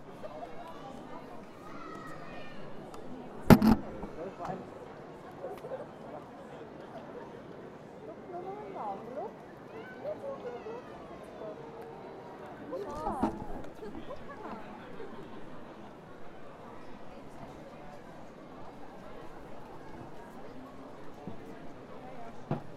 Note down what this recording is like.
Once in a year, the students of Aarau walk with lanterns through the city. The event is said to come from medival ages, when the brooks of the city were cleaned once a year. The students produce the lanterns themselves, thus every year it is also a parade of new designs. You hear the drums in front of the parade, then the whole parade, the recording is made within the audience, who comments on the lanterns ('pinguine!', 'das Aarauer Stadtwappen), as well as the singing students, who always sing the same song: «Fürio de Bach brönnt, d Suhrer händ /ne aazöndt, d Aarauer händ ne glösche, / d Chüttiger, d Chüttiger riite uf de Frösche!».